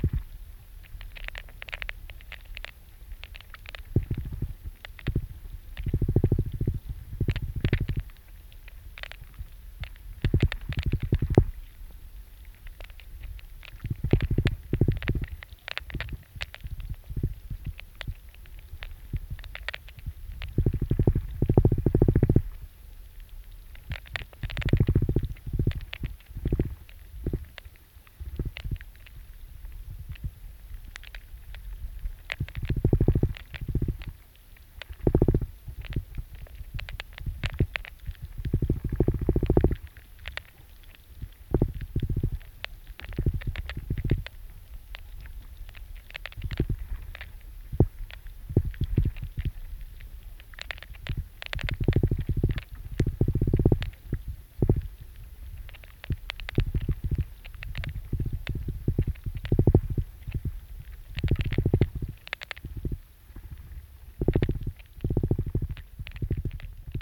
Ilciukai, Lithuania, underwater
river Sventoji. hydrophone at the abandoned watermill
Utenos apskritis, Lietuva, April 2020